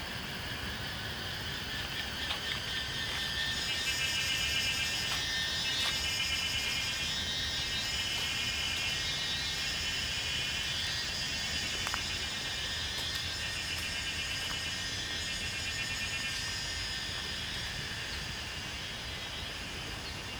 桃米溪, 桃米里 Puli Township - Walking along beside the stream
Walking along beside the stream, Cicadas sound, Dogs barking, Brook
Zoom H2n MS+XY